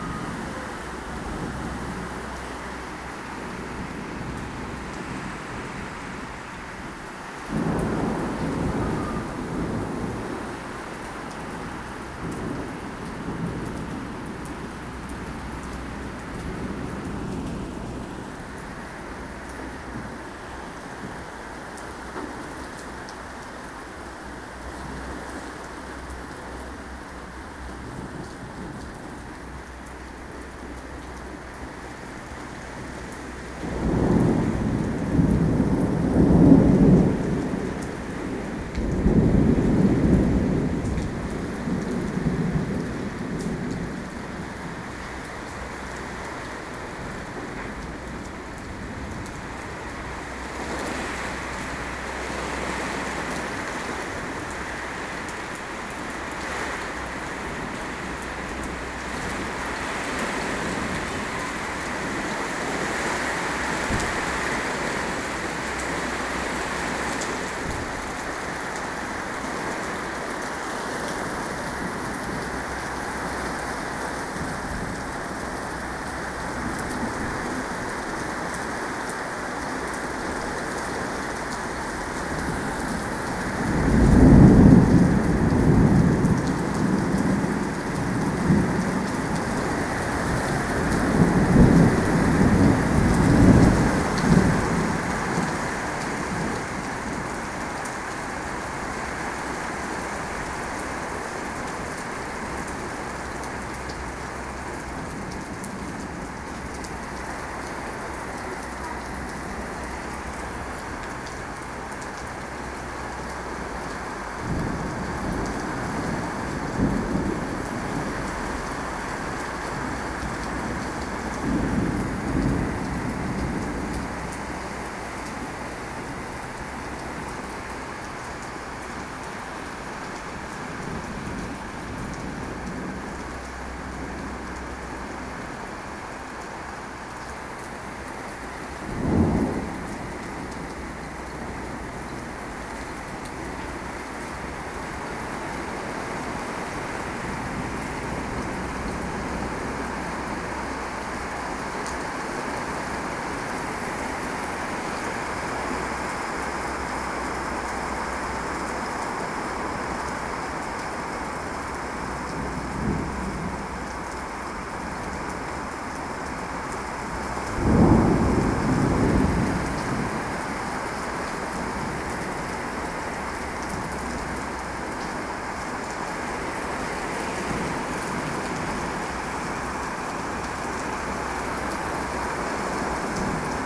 National Gallery, Bulawayo, Zimbabwe - Thunderstorm...
A mighty thunderstorm over Byo… I observe it from under an umbrella at the courtyard café of the National Gallery… having some trouble trying to save my recorder from the blast of the elements…
30 October 2013